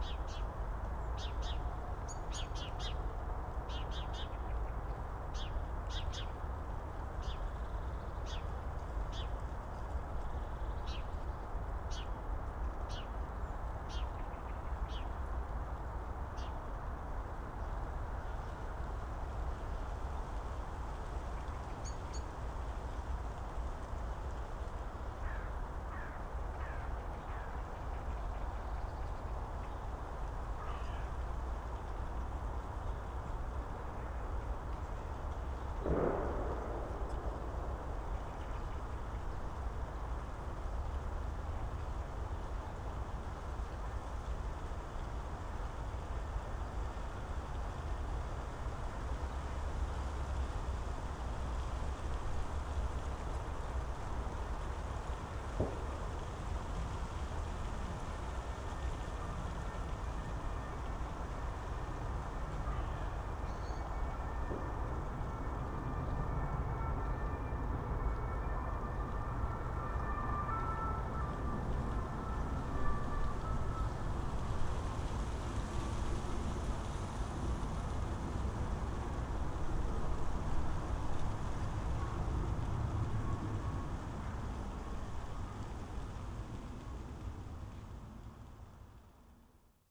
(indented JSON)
{
  "title": "Tempelhofer Feld, Berlin, Deutschland - afternoon in December, ambience",
  "date": "2018-12-22 15:40:00",
  "description": "relatively quiet early winter afternoon at the poplars\n(SD702, AT BP4025)",
  "latitude": "52.48",
  "longitude": "13.40",
  "altitude": "42",
  "timezone": "Europe/Berlin"
}